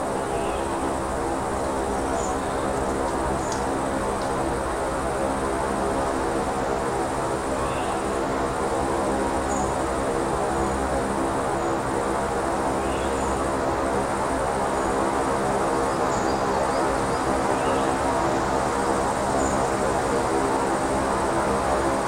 Utena, Lithuania, natural drone
some metallic gate guarding the road to the arboretum. I placed two micro mics into the tubes of the gates and...there was drone.